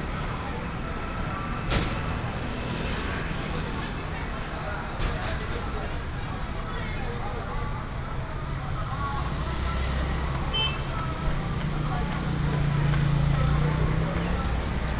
Bade St., East Dist., Taichung City - Walking through the traditional market
Walking through the traditional market
East District, Taichung City, Taiwan